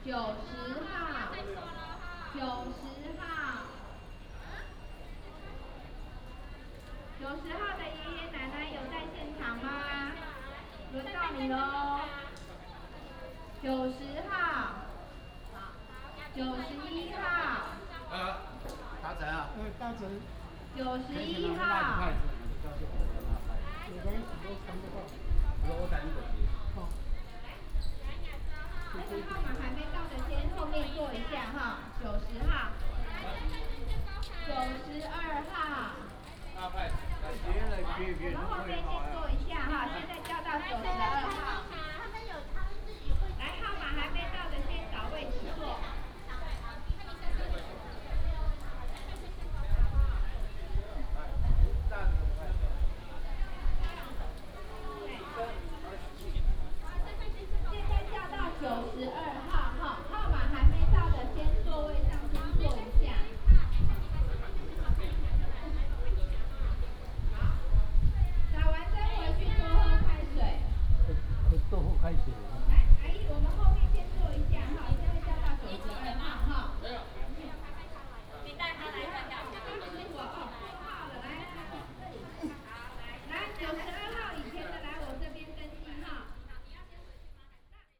空軍十八村, 新竹市北區 - Old military community
Apply a vaccination, Old military community, Many elderly people line up to play the vaccination, Binaural recordings, Sony PCM D100+ Soundman OKM II